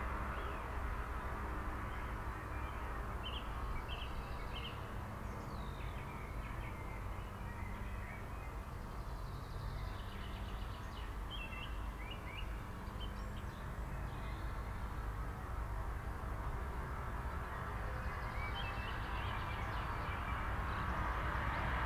4901, Lithuania, in the tube
some tube blocking the path to the wood. I had only iOgone and Sennheiser Ambeo headset with me, so I placed ambeo mics inside the tube to reveal inner resonances
Utenos apskritis, Lietuva